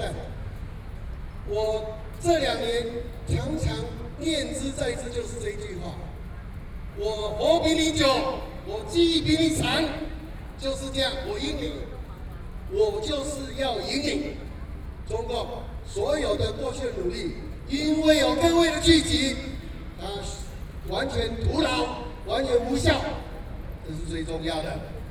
event activity of the Tiananmen Square protests, Sony PCM D50 + Soundman OKM II
中正區 (Zhongzheng), 台北市 (Taipei City), 中華民國